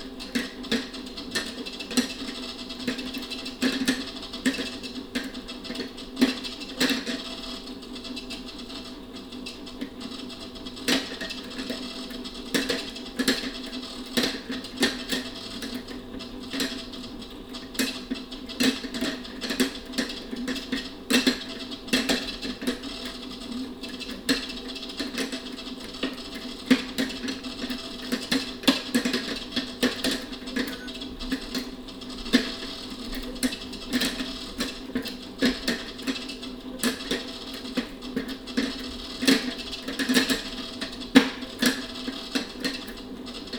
Lörick, Düsseldorf, Deutschland - Düsseldorf, Wevelinghoferstr, kybernetic op art objects
The sound of kybernetic op art objects of the private collection of Lutz Dresen. Here no. 01 an rotating wood object
soundmap nrw - topographic field recordings, social ambiences and art places
Düsseldorf, Germany, 25 April